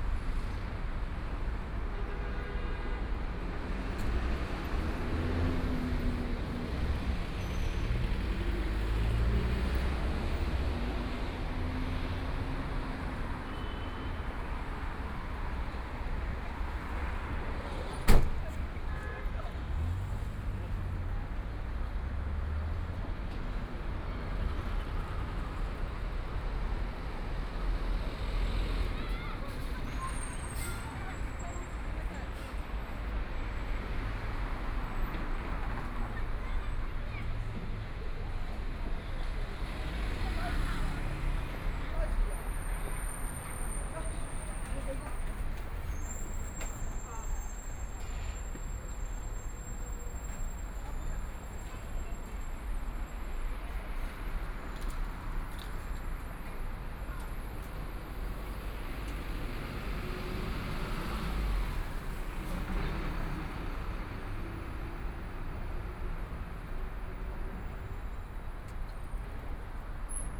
South Zhongshan Road, Shanghai - walking on the Road
Walking beside the road, The sound of the crowd on the street, Traffic Sound, Unloading sound, Binaural recording, Zoom H6+ Soundman OKM II
Shanghai, China, 3 December 2013, ~18:00